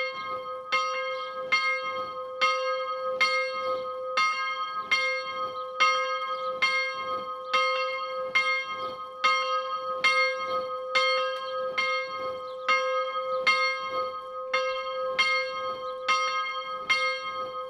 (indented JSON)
{
  "title": "Rue du Bois, Bousignies, France - Chapelle de Bousignies",
  "date": "2021-01-18 12:00:00",
  "description": "Bousignies (Nord)\nChapelle\n12h + angélus\nSonneries automatisées",
  "latitude": "50.43",
  "longitude": "3.35",
  "altitude": "17",
  "timezone": "Europe/Paris"
}